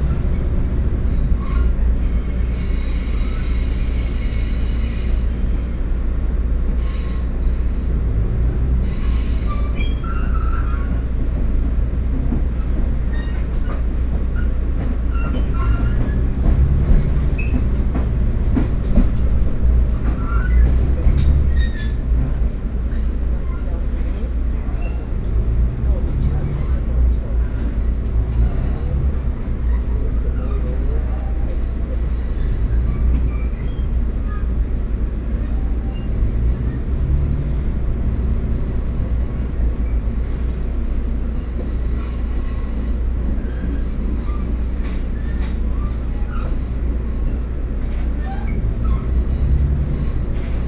Pingxi District, New Taipei City - Inside the train